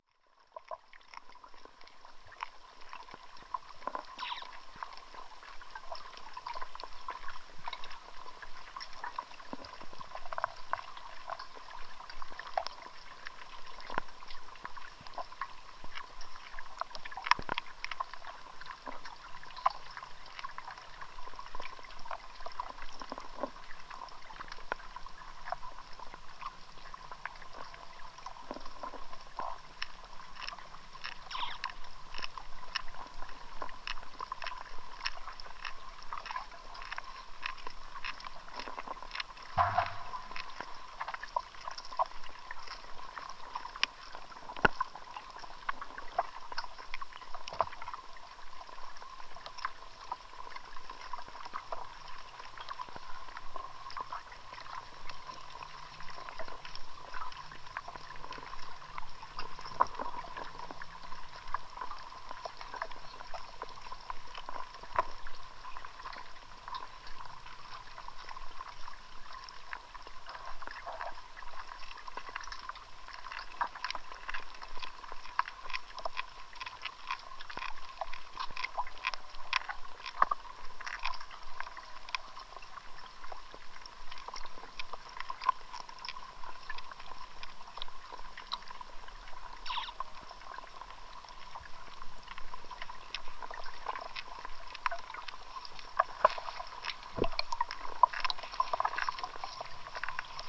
Vyžuonos, Lithuania, lake Baltys underwater
Underwater microphone in lake Baltys